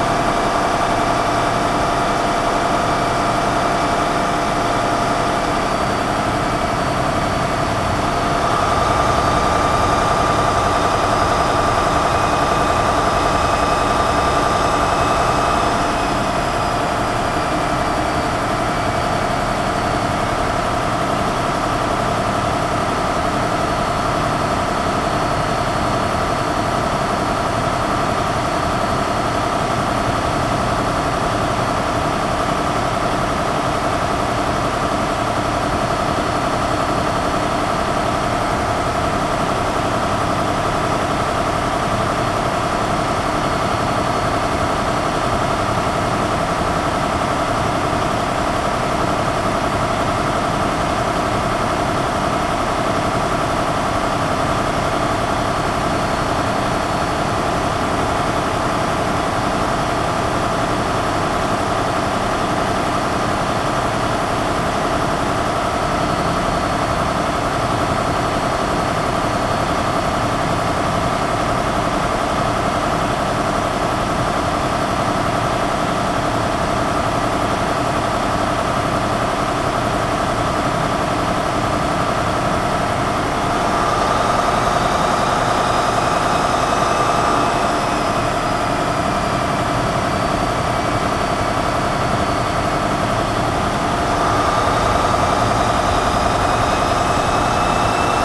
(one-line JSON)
{"title": "Flughafenstraße, Lemwerder, Germany - Old marine diesel engine", "date": "2018-01-23 19:59:00", "description": "40-year old marine diesel engine, recorded in engine room at different regimes\nVieux moteur diesel marine", "latitude": "53.16", "longitude": "8.62", "altitude": "2", "timezone": "GMT+1"}